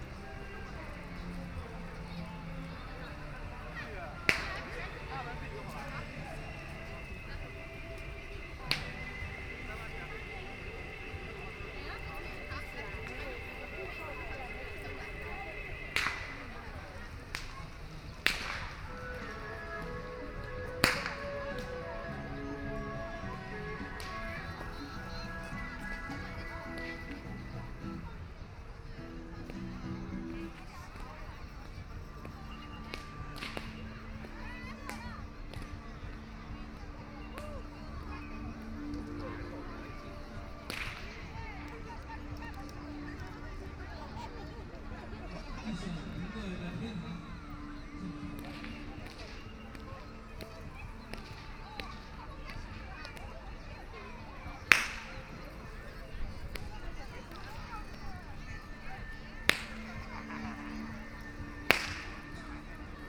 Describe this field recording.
on the grass, A group of people is the voice of a rope thrown to fight, There are people singing nearby, Binaural recording, Zoom H6+ Soundman OKM II